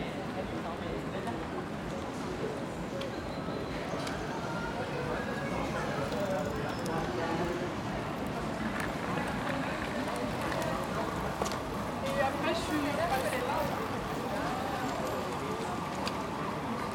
Bikes, people passing by, trams, police siren in the end.
Tech Note : Sony PCM-D100 internal microphones, wide position.
Brussel-Hoofdstad - Bruxelles-Capitale, Région de Bruxelles-Capitale - Brussels Hoofdstedelijk Gewest, België / Belgique / Belgien, 19 September